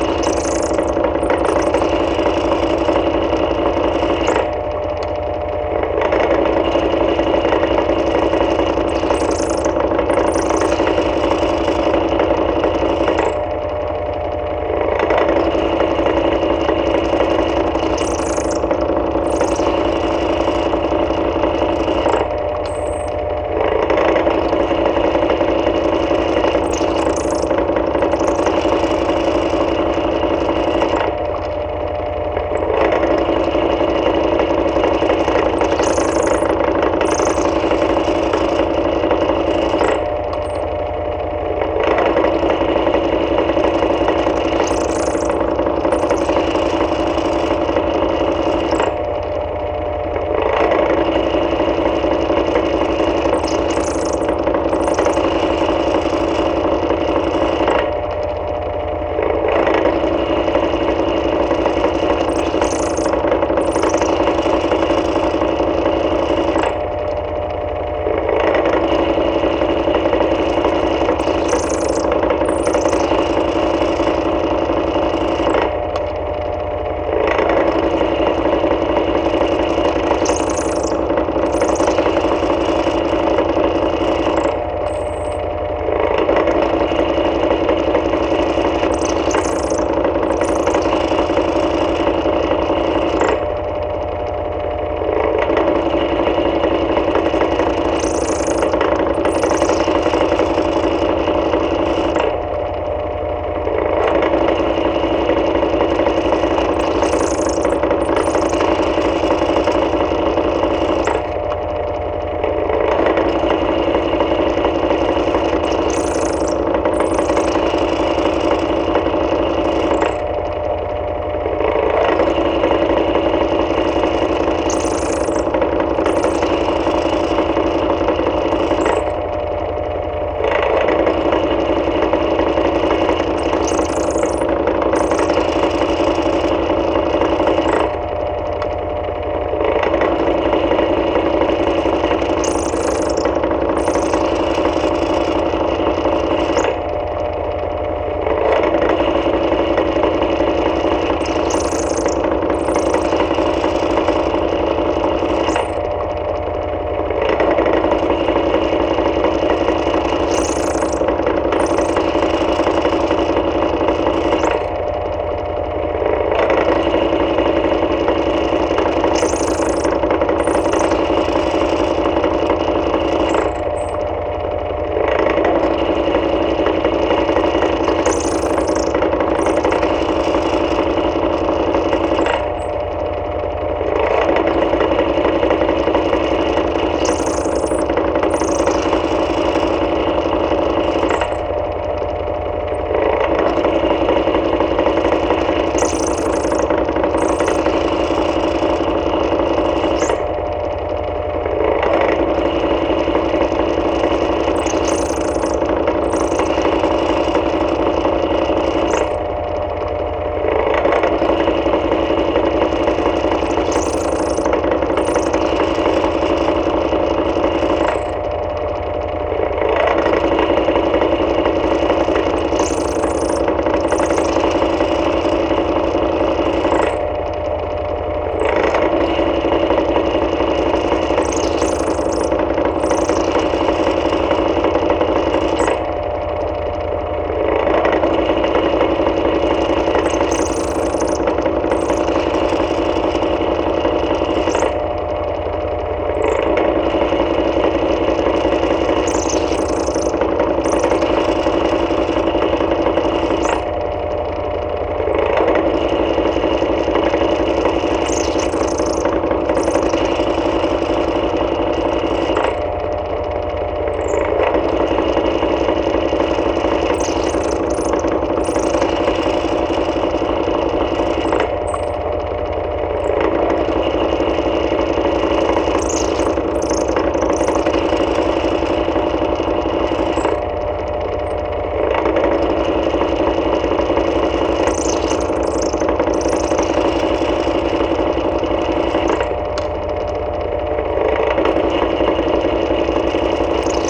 East Austin, Austin, TX, USA - Eva's Desk Fan
Recorded with a pair of JrF contact mics and a Marantz PMD661